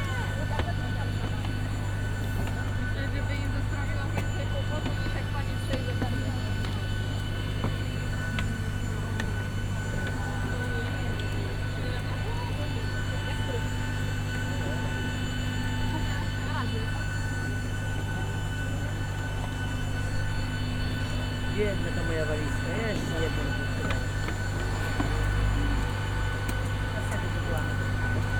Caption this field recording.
leaving the terminal and slowly walking towards the plane and up the stairs. passengers talking to the staff and leaving the handbags on the trolley.